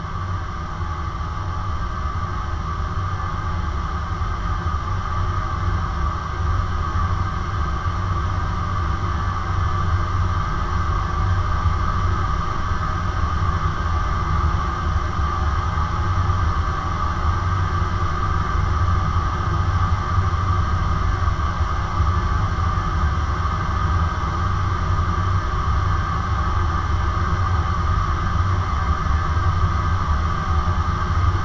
Utena, Lithuania, support wires at a dam
contact mics on support wires of the frozen dam